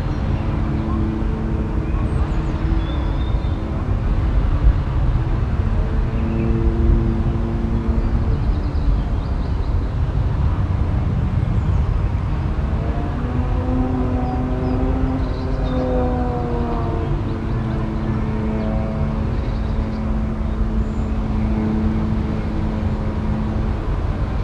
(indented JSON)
{
  "title": "heiligenhaus, am alten steinbruch",
  "date": "2008-07-02 22:43:00",
  "description": "flughimmel und vogelstimmen im talecho des alten steinbruchs, morgens - dazu das lärmen von zweitaktern und einem ferngesteuerten modellflugzeug\nproject: :resonanzen - neanderland - soundmap nrw: social ambiences/ listen to the people - in & outdoor nearfield recordings, listen to the people",
  "latitude": "51.31",
  "longitude": "6.95",
  "altitude": "120",
  "timezone": "Europe/Berlin"
}